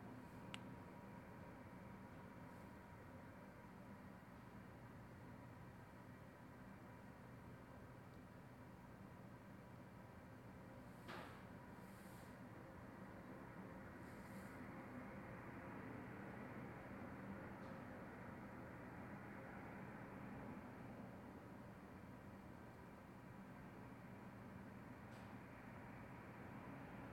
Bolton Hill, Baltimore, MD, USA - Traffic Echo
Late-night traffic heard from a glass-walled hallway.
14 November 2016